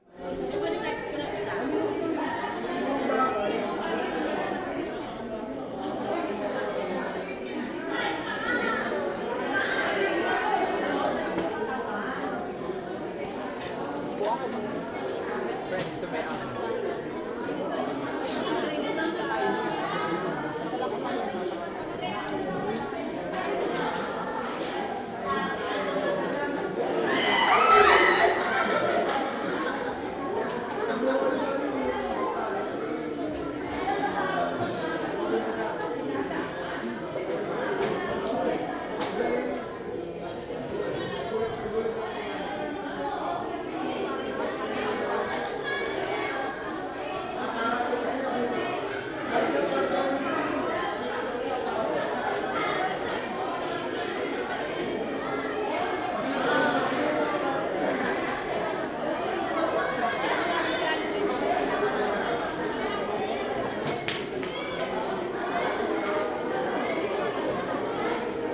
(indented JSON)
{
  "title": "Calabuso North, Tagaytay, Cavite, Filippinerna - Tagaytay People´s Park in the Sky #1",
  "date": "2016-07-17 10:31:00",
  "description": "Tourists gather on the roofed open panoramic view place at the upper platform of \"Palace in the Sky\", the unfinished mansion from the Marcos period in the eighties, now a tourist attraction with widespread views from the top of the inactive stratovulcano Mount Sungay (or Mount Gonzales). Someone from the Tagaytay Picnic Grove is showing a big white snake. WLD 2016",
  "latitude": "14.14",
  "longitude": "121.02",
  "altitude": "733",
  "timezone": "Asia/Manila"
}